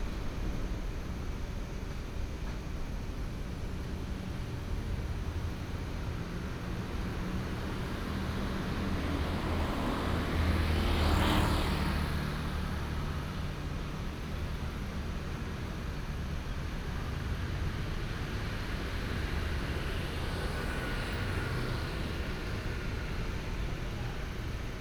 {"title": "Zhuangjing Rd., Banqiao Dist., New Taipei City - Traffic Sound", "date": "2015-09-16 13:41:00", "description": "Traffic Sound\nBinaural recordings\nSony PCM D100+ Soundman OKM II", "latitude": "25.03", "longitude": "121.47", "altitude": "14", "timezone": "Asia/Taipei"}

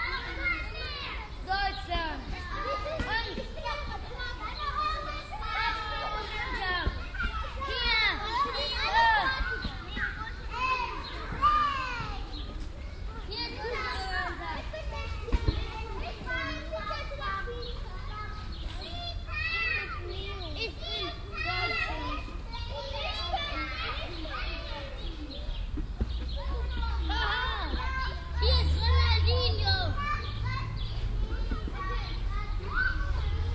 {"title": "cologne, karl-korn str, kindergarten", "date": "2008-06-01 09:00:00", "description": "soundmap: köln/ nrw\nrollender ball, kinderstimmen, mittags am kindergarten - karl korn strasse\nproject: social ambiences/ listen to the people - in & outdoor nearfield recordings", "latitude": "50.92", "longitude": "6.96", "altitude": "52", "timezone": "Europe/Berlin"}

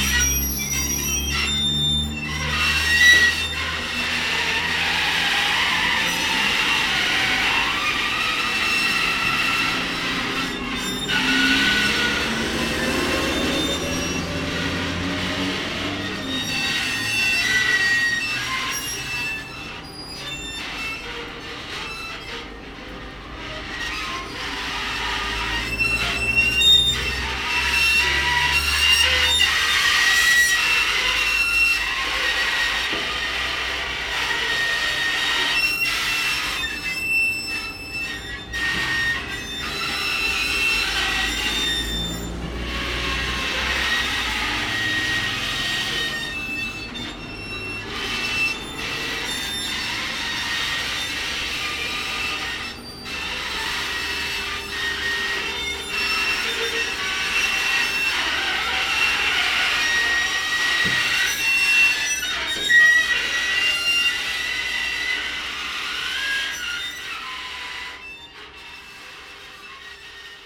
{"title": "Yerevan, Arménie - Merzbow like billboard", "date": "2018-09-02 09:50:00", "description": "On the big Sayat Nova avenue, there's a billboard. As it's ramshackle, it produces some Merzbow like music. Not especially an ASMR sound !", "latitude": "40.19", "longitude": "44.52", "altitude": "1011", "timezone": "Asia/Yerevan"}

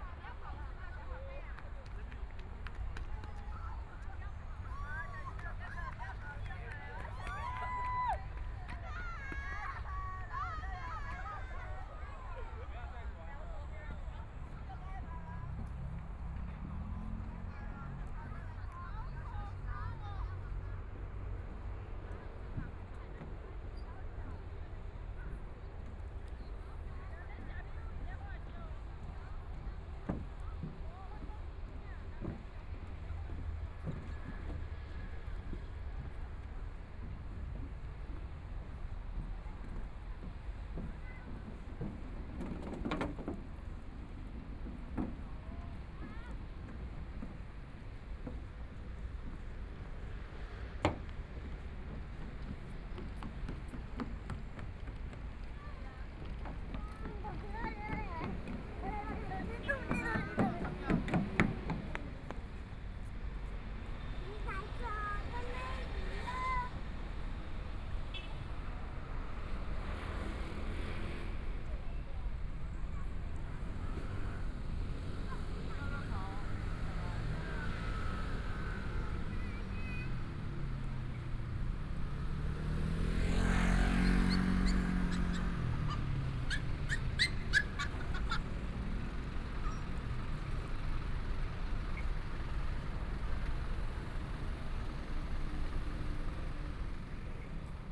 {"title": "新生公園, Taipei EXPO Park - Walking through the park", "date": "2014-02-28 17:58:00", "description": "Walking through the park, Traffic Sound, Aircraft flying through, Sunny afternoon\nPlease turn up the volume a little\nBinaural recordings, Sony PCM D100 + Soundman OKM II", "latitude": "25.07", "longitude": "121.53", "timezone": "Asia/Taipei"}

